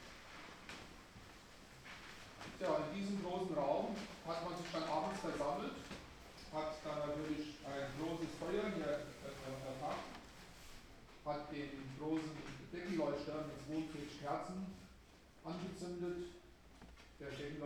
niederheimbach: burg sooneck - sooneck castle tour 4
guided tour through sooneck castle (4), visitors gliding on overshoes to the next room, guide continues the tour
the city, the country & me: october 17, 2010